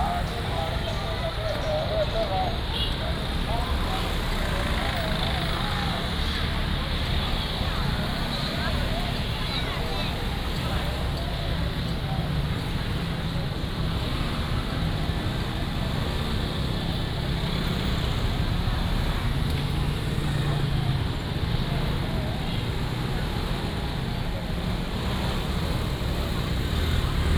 Bo’ai Rd., Yuanlin City - Walk through the market
Walk through the market, Traffic sound, Selling voice